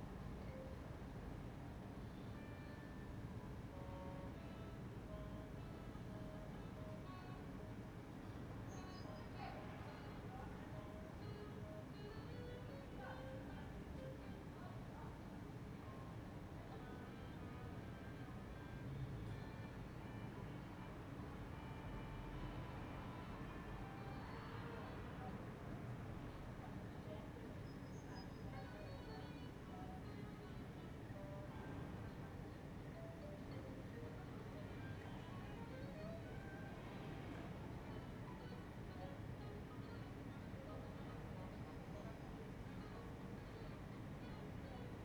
Ascolto il tuo cuore, città. I listen to your heart, city. Several chapters **SCROLL DOWN FOR ALL RECORDINGS** - Five p.m. terrace with RadioTre and Burn-Ya in the time of COVID19: soundscape.
"Five p.m. terrace with RadioTre and Burn-Ya in the time of COVID19": soundscape.
Chapter CLVIII of Ascolto il tuo cuore, città. I listen to your heart, city
Saturday, February 20th, 2021. Fixed position on an internal terrace at San Salvario district Turin; Burn-Ya (music instrument) and old transistor radio broadcast RAI RadioTre are in the background. More than three months and a half of new restrictive disposition due to the epidemic of COVID19.
Start at 4:18: p.m. end at 5: p.m. duration of recording ’”
Piemonte, Italia